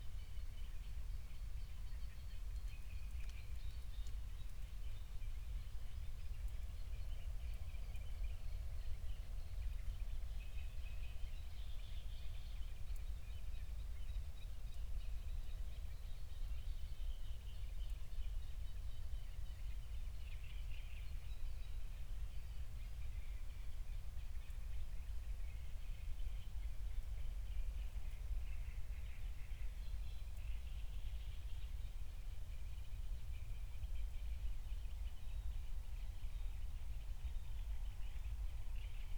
Berlin, Buch, Mittelbruch / Torfstich - wetland, nature reserve
03:00 Berlin, Buch, Mittelbruch / Torfstich 1
Deutschland, 19 June 2020, 03:00